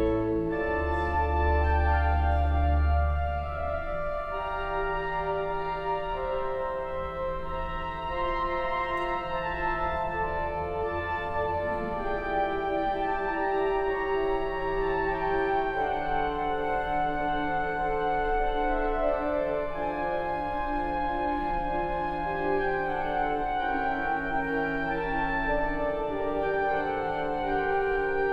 Inside the church at a mass. The sound of the outside church bells, the organ play and the singing of the catholic community.
international village scapes - topographic field recordings and social ambiences
Wiltz, Luxembourg, 9 August